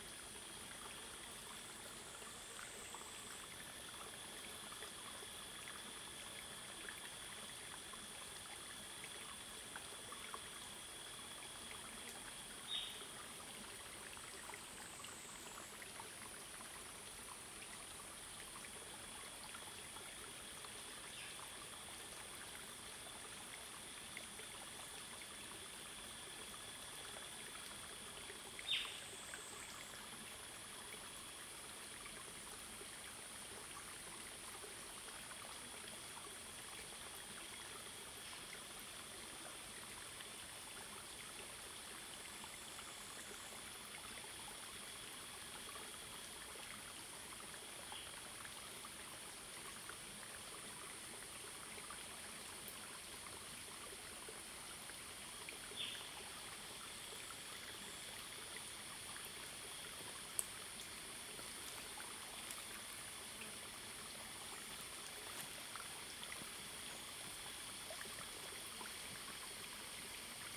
Hualong Ln., 五城村 Yuchi Township - In the woods
Small streams, Cicada sounds, Bird sounds
Zoom H2n MS+XY
Yuchi Township, 華龍巷43號, 5 May, 15:01